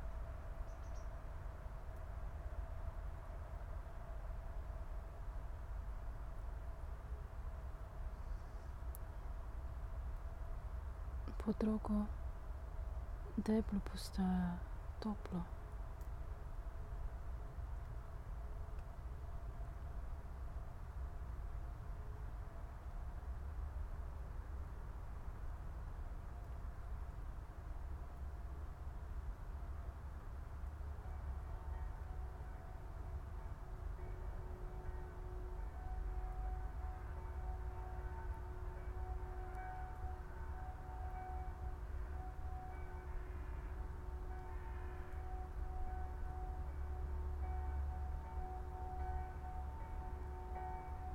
tree crown poems, Piramida - before dark
quiet atmosphere before dark, spoken words, bells
Maribor, Slovenia